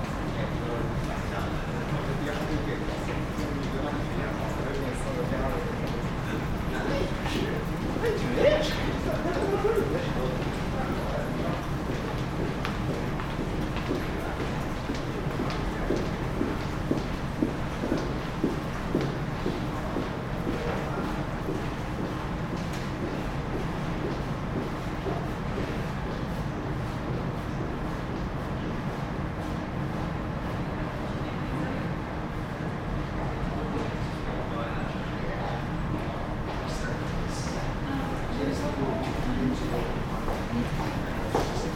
Calgary +15 6th Ave SW bridge
sound of the bridge on the +15 walkway Calgary
Alberta, Canada